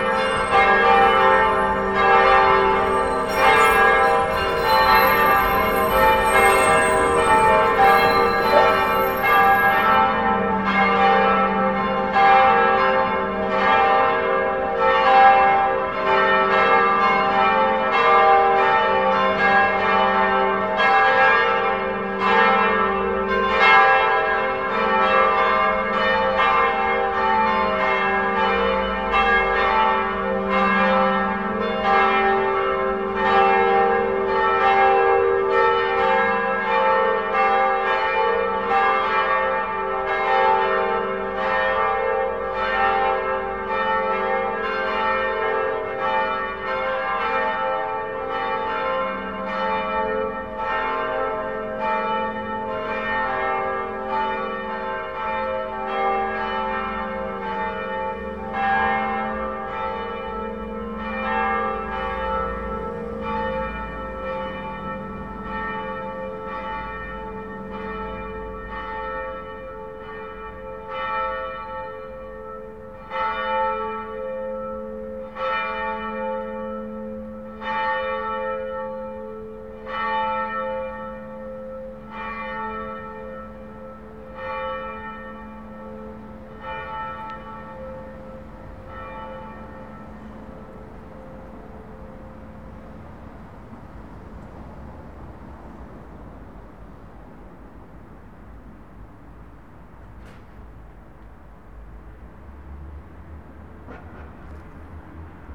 Brussels, Altitude 100, the bells
Bruxelles, les cloches de lAltitude 100